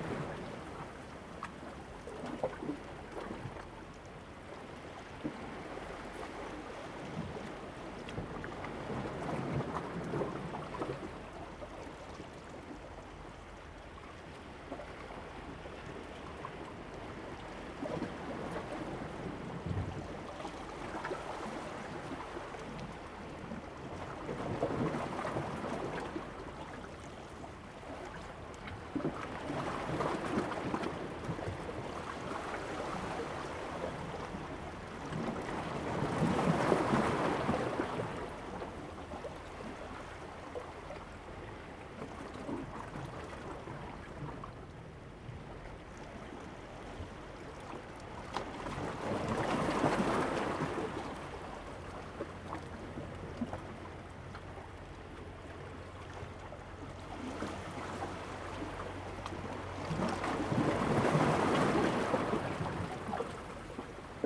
南濱公園, Hualien City - Rocks and waves
sound of the waves
Zoom H2n MS+XY